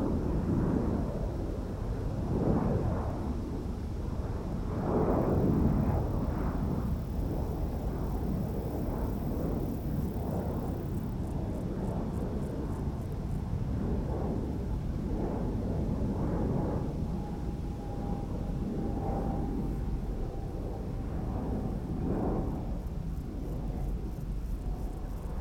Air traffic noise on a windy summers afternoon in Brockwell Park in Brixton, London.
London, UK, 26 July, 16:57